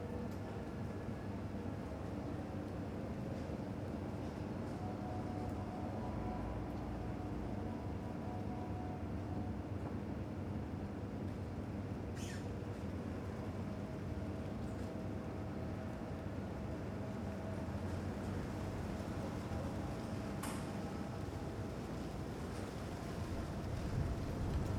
recording @ 3:20 in the morning in maadi / cairo egypt -> two neumann km 184 + sounddevice 722
1 May 2012, 17:36